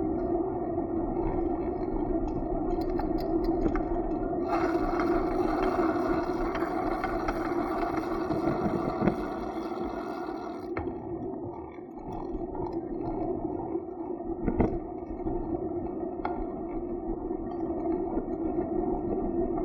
Este áudio faz parte da oficina de representações do grupo de estudos Devaneios Experimentais e Poéticas Imaginativas (DEPI). A proposta é registrar os sons característicos do “lugar” de cada participante da atividade, refletindo sobre os sentidos explícitos e implícitos emanados por aquele local.
Parte-se do entendimento da bicicleta como lugar. Assim, coloca-se em relevo a relação pessoal com a bicicleta em sua aproximação com o corpo sensível, afinado com as vibrações e sons produzidos pela máquina em uso. Para acentuar estes rangidos, cliques, vibrações e ruídos, e evitar outros sons intensos provenientes da cidade, foi improvisado um microfone de contato afixado ao seat tube (tubo do selim). O resultado é uma representação da imagem sensorial que informa a pilotagem quase em nível subconsciente. Pertencente simultaneamente aos domínios sonoro e tátil em sua experiência direta, aqui traduz-se no domínio sonoro em sua redução enquanto representação.
São Paulo, Região Sudeste, Brasil, September 2021